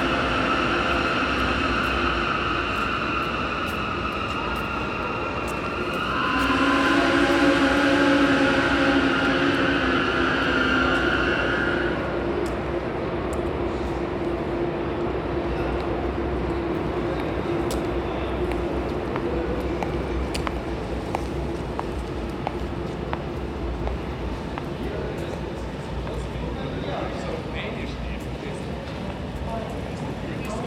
Moabit, Berlin, Deutschland - Berlin. Hauptbahnhof - ICE am Gleis 7
Standort: Gleis 7. Blick Richtung Gleis.
Kurzbeschreibung: Geräuschkulisse des Bahnhofs, Ansage, Einfahrt ICE, Fahrgäste, Abfahrt ICE.
Field Recording für die Publikation von Gerhard Paul, Ralph Schock (Hg.) (2013): Sound des Jahrhunderts. Geräusche, Töne, Stimmen - 1889 bis heute (Buch, DVD). Bonn: Bundeszentrale für politische Bildung. ISBN: 978-3-8389-7096-7